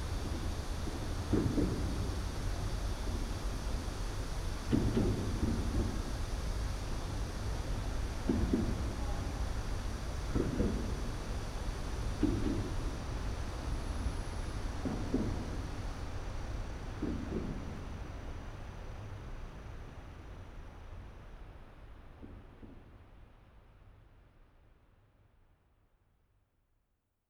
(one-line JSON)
{"title": "대한민국 서울특별시 서초구 양재2동 233 여의교 - Yangjae Citizens Forest, Yeoui Bridge, Low Rattling noise", "date": "2019-08-07 14:12:00", "description": "Yangjae Citizens Forest, Yeoui Bridge, Low putter sound at bridge underway", "latitude": "37.47", "longitude": "127.04", "altitude": "23", "timezone": "Asia/Seoul"}